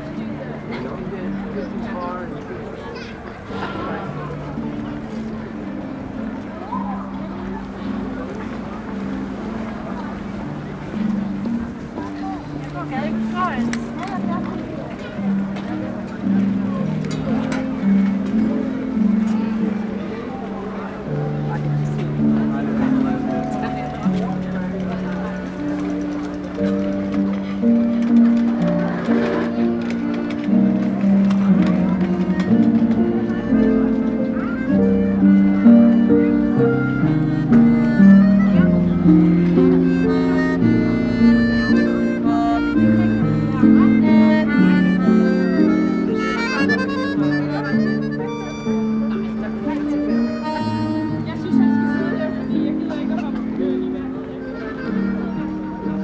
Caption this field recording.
During a day off, the main tourist avenue of Copenhagen called Nyhavn. Most people are discreet.